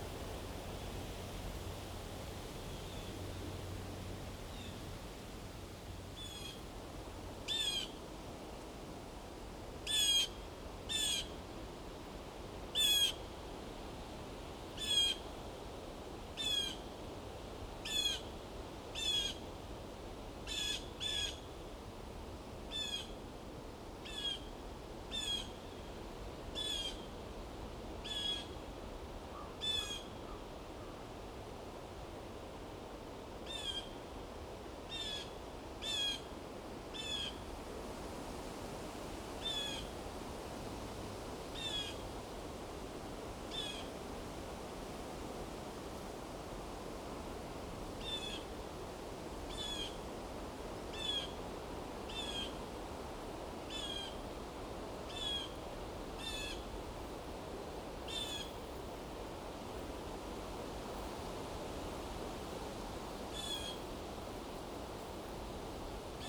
Kings, Subd. B, NS, Canada - Helicopter resonating the hills and blue Jays
This helicopter flew over several time while we were here. Sometimes its drone reverberated in the hills. In the quiet between Blue Jays chattered.